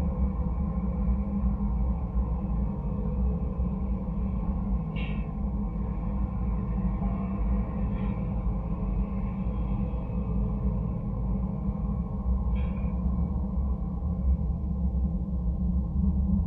Rytmečio g., Karkiškės, Lithuania - Water tower support cable
Dual contact microphone recording of a long water tower support cable. Wind, ambience and occasional traffic sounds are droning and reverberating along the cable.
Kauno apskritis, Lietuva, 1 May 2020